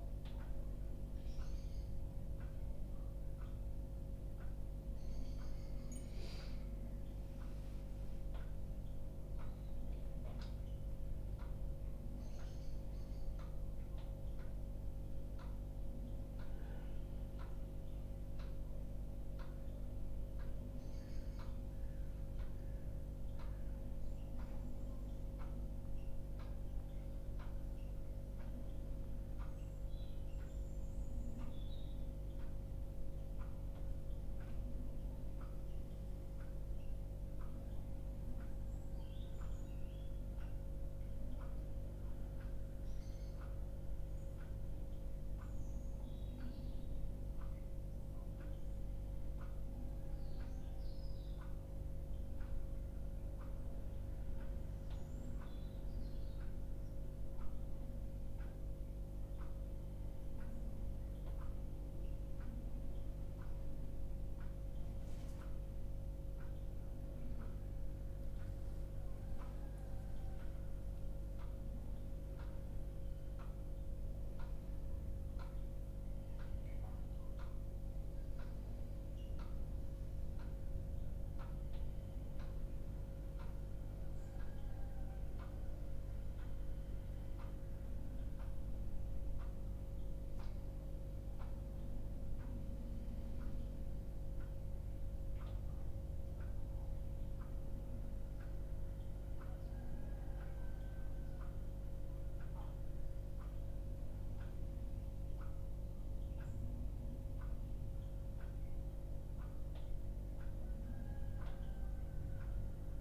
Unnamed Road, Dorchester, UK - New Barn Morning Meditation Pt1
This upload captures the morning chant read in English and then chanted in Vietnamese. (Sennheiser 8020s either side of a Jecklin Disk on a SD MixPre6)